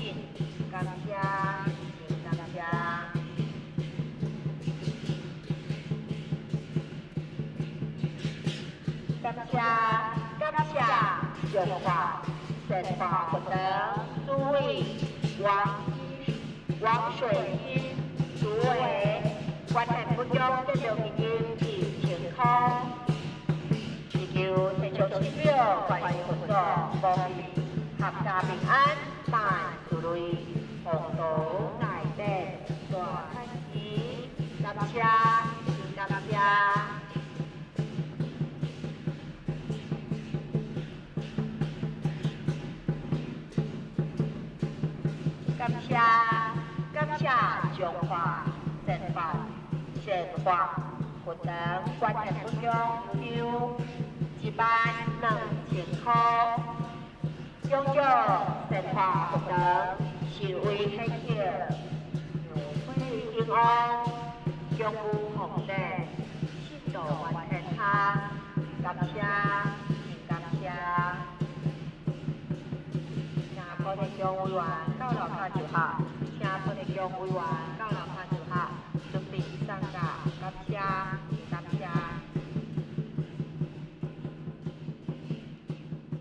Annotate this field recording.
Next to the temple, Firecrackers, Traditional temple festivals, Zoom H6 MS